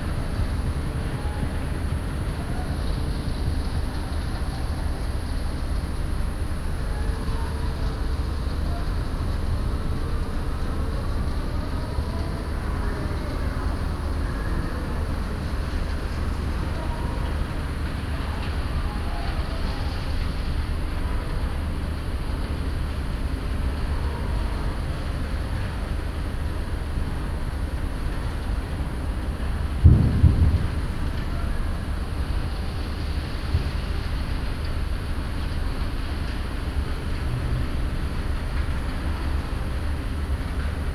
Berlin, Plänterwald, Spree - cement factory at night
place revisited on World Listening Day, industrial sounds travelling across the river. The cement factory is busy all night and day.
(Sony PCM D50, DPA4060)